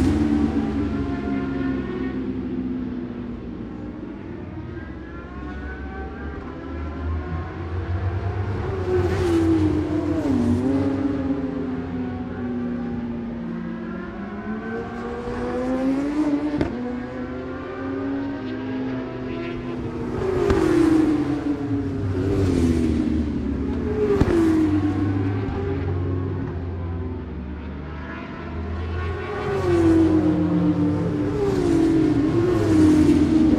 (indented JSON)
{
  "title": "Scratchers Ln, West Kingsdown, Longfield, UK - British Superbikes 2005 ... FP1 ...",
  "date": "2005-03-26 11:30:00",
  "description": "British Superbikes 2005 ... FP1 ... Audio Technica one point mic ...",
  "latitude": "51.36",
  "longitude": "0.26",
  "altitude": "133",
  "timezone": "Europe/London"
}